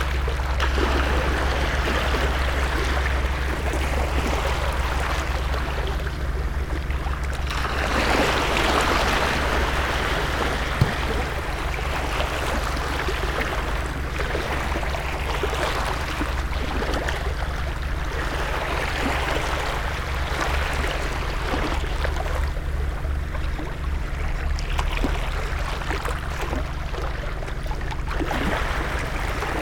pier, Novigrad, Croatia - eavesdropping: waves slither
waves slither on pier